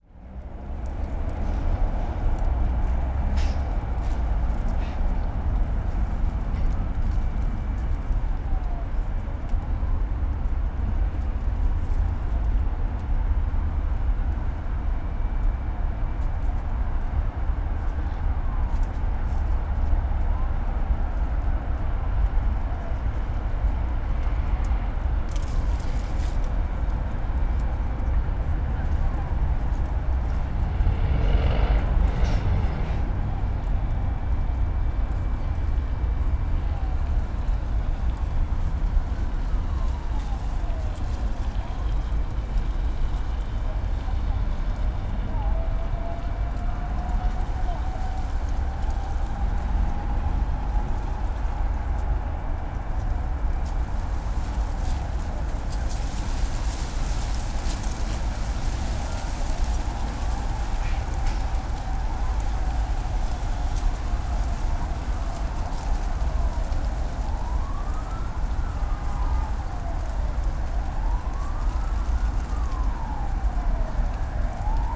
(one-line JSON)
{"title": "Prague, Rohanské nábřeží - rush hour city hum", "date": "2012-10-03 16:40:00", "description": "city hum and sirens heard from within a bamboo bush near the river.\n(SD702, DPA4060)", "latitude": "50.10", "longitude": "14.45", "altitude": "185", "timezone": "Europe/Prague"}